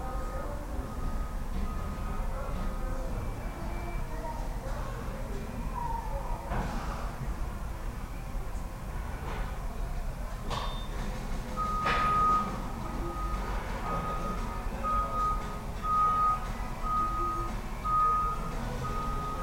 Forklifts, pop hits and announcements on a Monday afternoon, Stereo mic (Audio-Technica, AT-822), recorded via Sony MD (MZ-NF810, pre-amp) and Tascam DR-60DmkII.